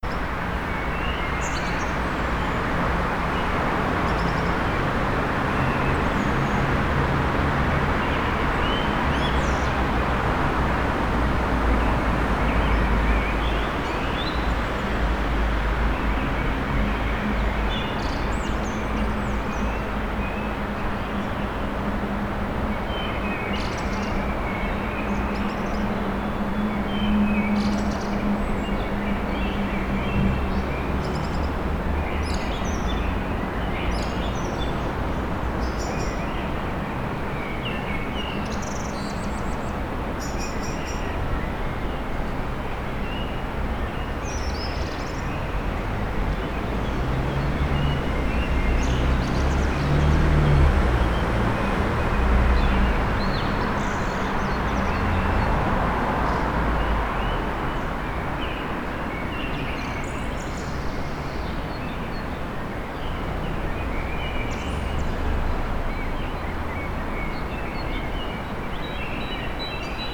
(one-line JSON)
{"title": "Innsbruck, vogelweide, Waltherpark, Österreich - Frühling im Waltherpark/vogelweide, Morgenstimmung", "date": "2017-03-14 05:44:00", "description": "walther, park, vogel, weide, vogelgezwitscher, autoverkehr, stadtgeräusche, singende vögel, winterzeit gegen 5:44, waltherpark, vogelweide, fm vogel, bird lab mapping waltherpark realities experiment III, soundscapes, wiese, parkfeelin, tyrol, austria, anpruggen, st.", "latitude": "47.27", "longitude": "11.39", "altitude": "575", "timezone": "Europe/Vienna"}